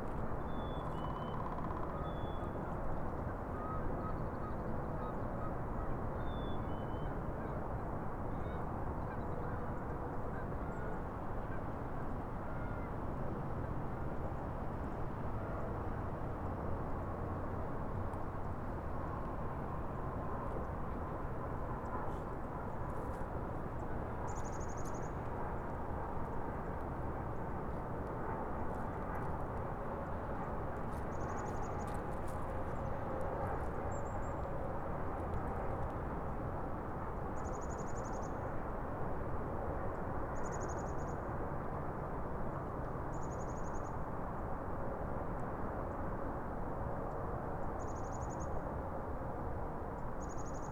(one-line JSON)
{"title": "Big Rivers Regional Trailhead", "date": "2022-03-17 15:45:00", "description": "Recorded at the trailhead for the Big Rivers Regional Trail. This spot overlooks the Minnesota River and is under the arrival path for runways 30L and 30R at Minneapolis/St Paul International Airport. Landing planes as well as wildlife and road noise from nearby I-494 can be heard.", "latitude": "44.87", "longitude": "-93.17", "altitude": "242", "timezone": "America/Chicago"}